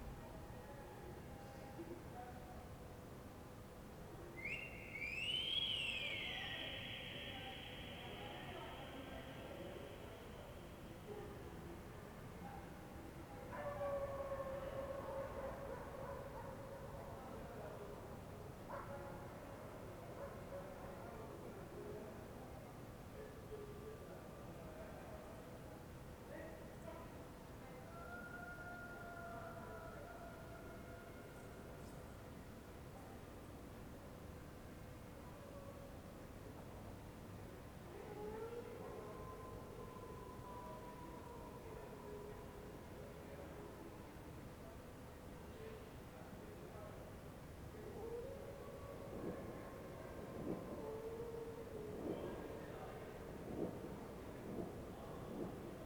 Ascolto il tuo cuore, città. I listen to your heart, city. Several chapters **SCROLL DOWN FOR ALL RECORDINGS** - Stille Nacht with howling wolves in the time of COVID19: soundscape.
"Stille Nacht with howling wolves in the time of COVID19": soundscape.
Chapter CXLVIX of Ascolto il tuo cuore, città. I listen to your heart, city
Thursday December 24th 2020. Fixed position on an internal terrace at San Salvario district Turin, about six weeks of new restrictive disposition due to the epidemic of COVID19.
Start at 11:47 p.m. end at 00:17 a.m. duration of recording 29’52”